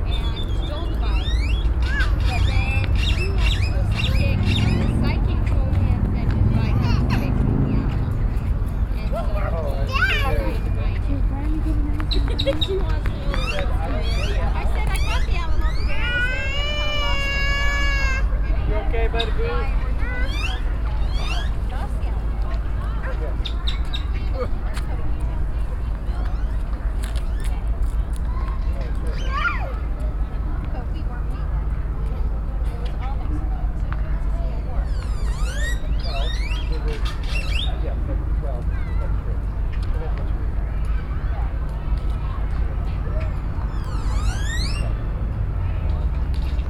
Austin, Texas State Capitol, Park
USA, Austin, Texas, Capitol, Birds, Children, binaural
Austin, TX, USA, 11 November, ~16:00